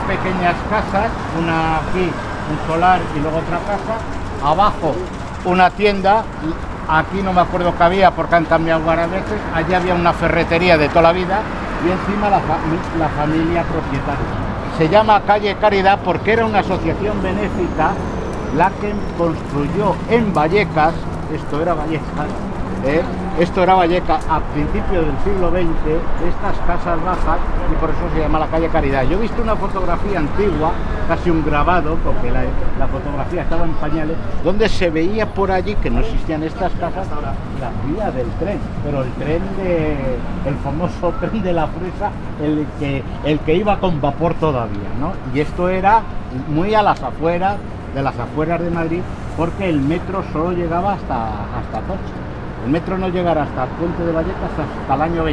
Pacífico, Madrid, Madrid, Spain - Pacífico Puente Abierto - Transecto - 07 - Calle Caridad
Pacífico Puente Abierto - Transecto - Calle Caridad